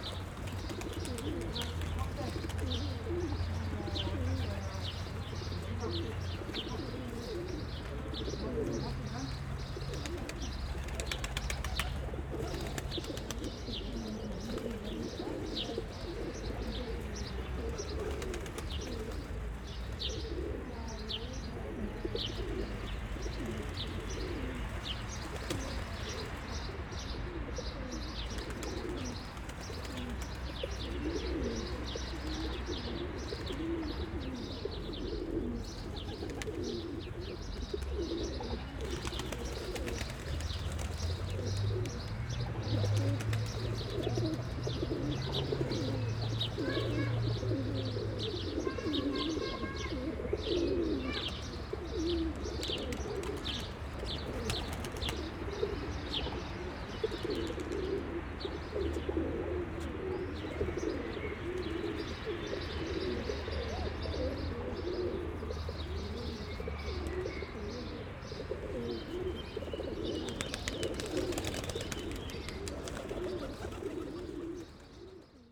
Maribor, Hitra Cesta - pidgeons and bells
hundred pidgeons on a concrete structure above the road, which disappears into a tunnel here.
(tech: SD702, Audio Technica BP4025)